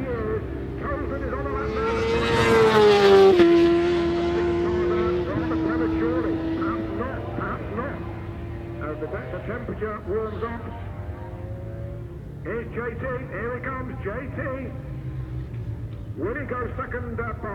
{
  "title": "Silverstone Circuit, Towcester, United Kingdom - World SuperBikes 2003 ... Qualifying ...",
  "date": "2003-06-14 12:20:00",
  "description": "World Superbikes 2003 ... Qualifying ... part two ... one point stereo mic to minidisk ...",
  "latitude": "52.07",
  "longitude": "-1.02",
  "altitude": "152",
  "timezone": "GMT+1"
}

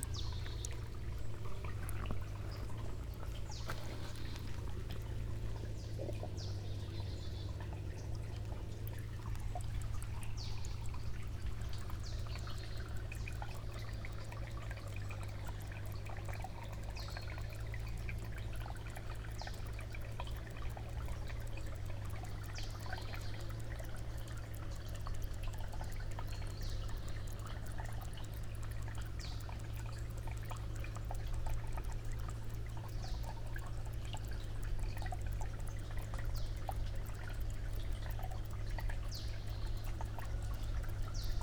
{
  "title": "moss garden, Studenice, Slovenija - moss garden, almost dry, drops",
  "date": "2015-08-05 15:04:00",
  "latitude": "46.30",
  "longitude": "15.62",
  "altitude": "326",
  "timezone": "Europe/Ljubljana"
}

